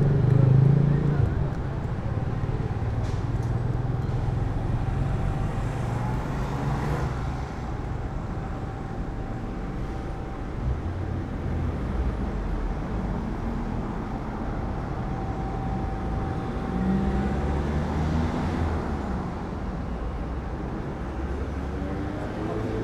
Berlin: Vermessungspunkt Friedelstraße / Maybachufer - Klangvermessung Kreuzkölln ::: 20.08.2013 ::: 16:35